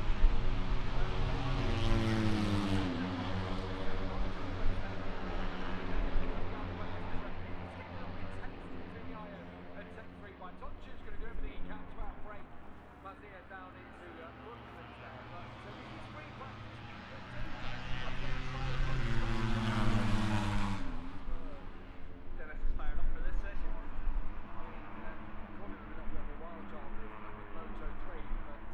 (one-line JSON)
{"title": "Silverstone Circuit, Towcester, UK - british motorcycle grand prix 2022 ... moto three", "date": "2022-08-05 13:15:00", "description": "british motorcycle grand prix 2022 ... moto three free practice two ... zoom h4n pro integral mics ... on mini tripod ...", "latitude": "52.07", "longitude": "-1.01", "altitude": "157", "timezone": "Europe/London"}